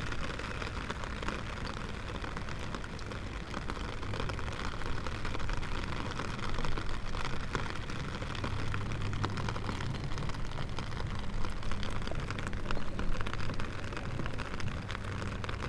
river Drava, Loka - rain, umbrella, drops
changed river morphology; strong flow of water has closed the way to the gravel bars